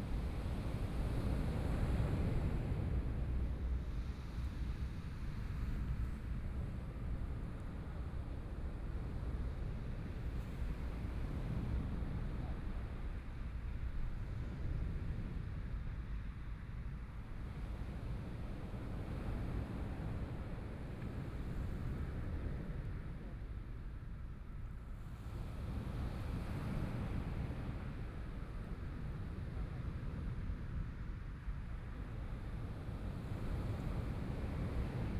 Beibin Park, Hualien City - Sound of the waves
Cloudy day, Sound of the waves, Binaural recordings, Sony PCM D50+ Soundman OKM II
Hualian City, 花蓮北濱外環道